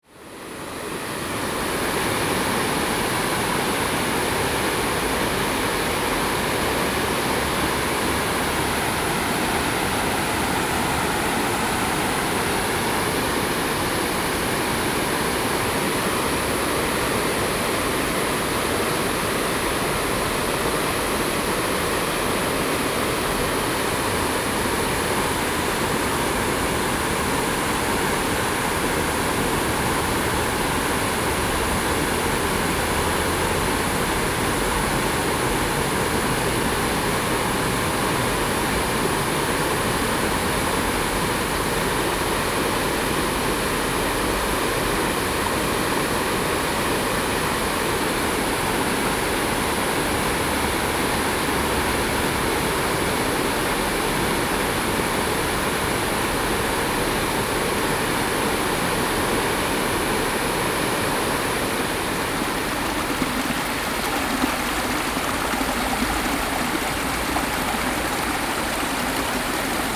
醒心橋, Tianfu, Sanxia Dist. - Stream
The sound of water, Stream, Stone
Zoom H4n +Rode NT4